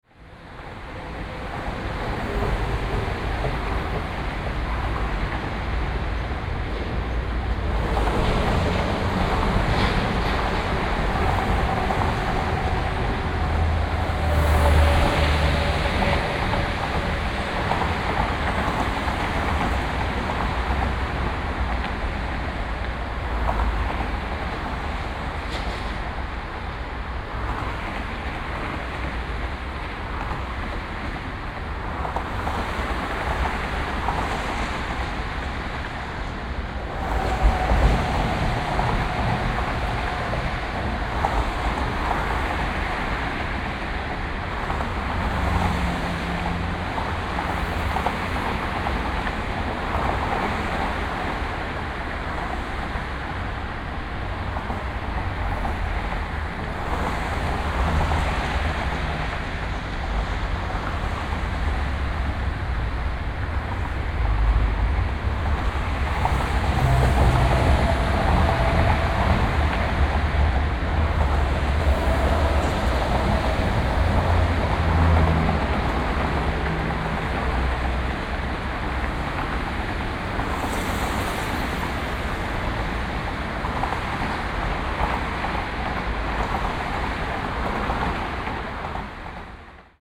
A100, Innsbrucker Platz
10.09.2008 16:05
on bridge over autobahn A100, rush hour, very violent noise